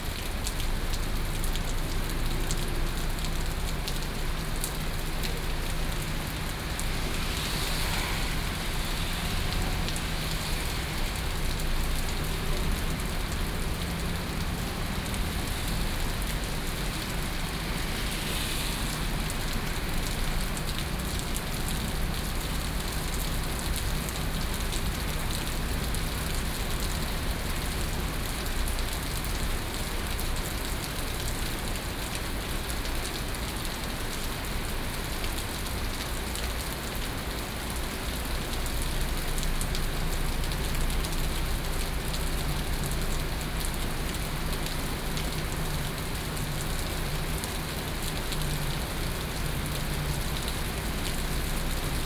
Wolong St., Da'an Dist. - Heavy rain
Heavy rain
Binaural recordings
Sony PCM D100+ Soundman OKM II